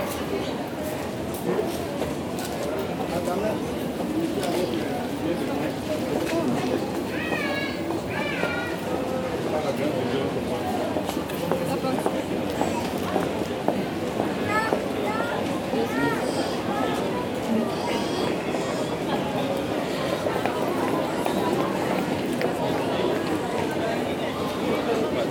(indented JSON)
{
  "title": "Ottignies-Louvain-la-Neuve, Belgium - Shopping mall",
  "date": "2018-12-15 16:40:00",
  "description": "A large shopping mall during a long walk. Make emerge a soothing sound from a huge commercial mall may seem like an antithesis. Indeed, the atmosphere can be particularly stressful. However, listening without being drowned into the busy place causes a sensation of calm. It's relaxing. After a while, we don't listen ; we hear, we are there but without being there. That's why I had chosen one of the worst dates possible : just a week before Christmas on a busy Saturday afternoon. These shops are so crowded that we are in a kind of wave, an hubbub, a flow. Discussions become indistinct. In reality excerpt a few fragments that startle in this density, we have an impression of drowning.",
  "latitude": "50.67",
  "longitude": "4.62",
  "altitude": "117",
  "timezone": "Europe/Brussels"
}